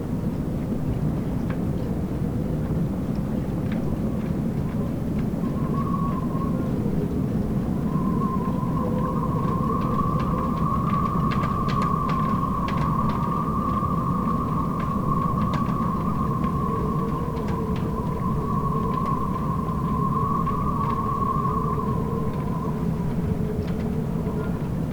lemmer, vuurtorenweg: marina - the city, the country & me: marina
wind blows through sailboat masts and riggings
the city, the country & me: june 21, 2011
21 June 2011, 11:27